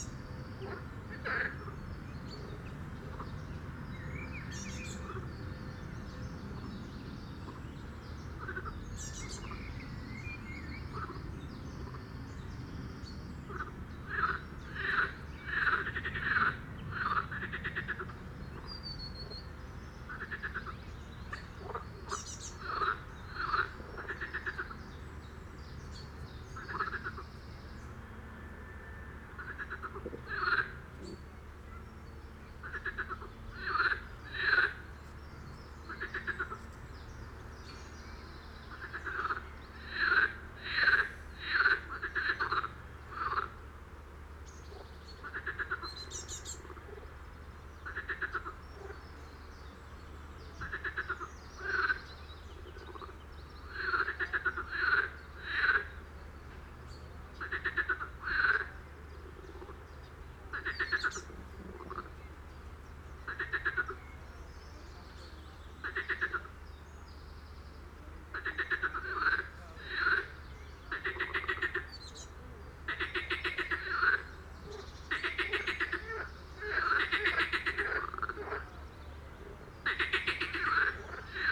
workum: suderséleane - the city, the country & me: frogs
the city, the country & me: june 25, 2015
Workum, Netherlands